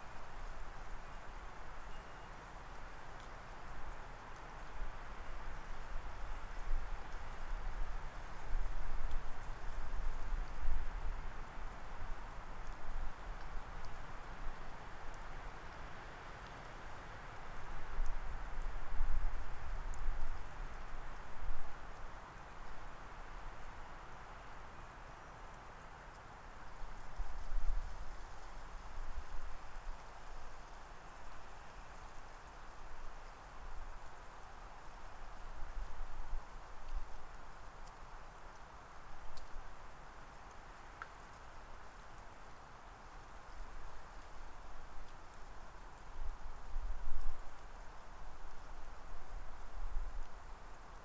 Three Pines Rd., Bear Lake, MI, USA - Late January Snowfall
Light snowfall adds to the pile already on the ground. Snowflakes and birds, followed by moving supplies between two vehicles and shovelling a path. Stereo mic (Audio-Technica, AT-822), recorded via Sony MD (MZ-NF810, pre-amp) and Tascam DR-60DmkII.